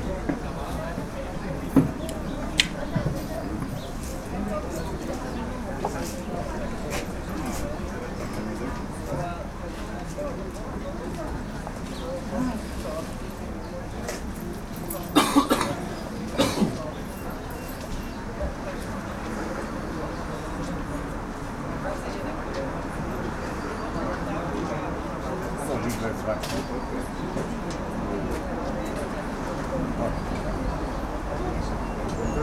recorded with binaural microphones
Bratislava, Slovakia, 26 October